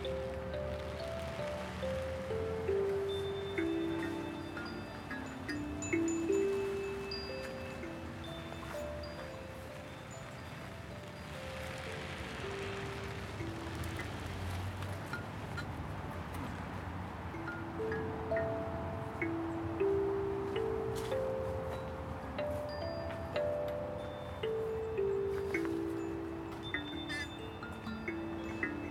Fulda, Alemanha - Music Therapy soundscapes
Recording of a soundscape improv in Schlossgarten (Fulda, DE) with Marzieh Ghavidel, Nazanin Jabbarian, Anton Preiger, Wolgang Meyberg and Ricardo Pimentel, during the Music Therapy seminar by Wolfgang Meyberg (International Summer University - Hochscule Fulda).
Fulda, Germany, 10 August 2016